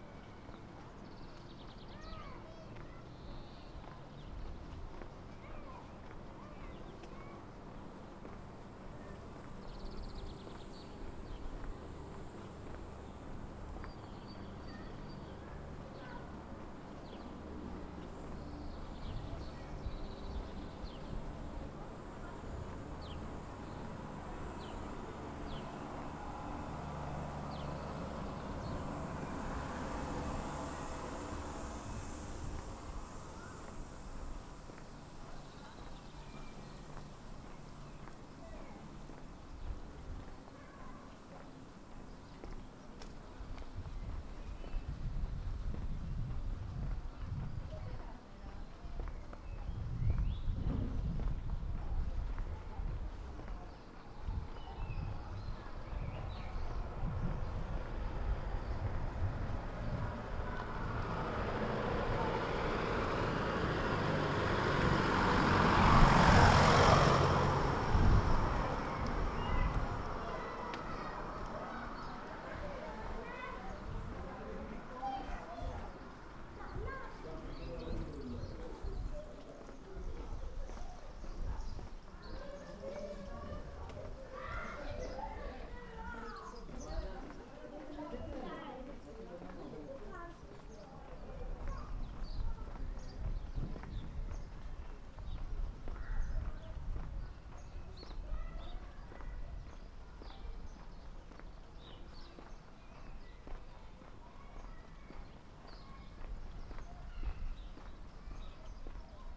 Platania, Crete, a walk
a walk down the narrow street to my hotel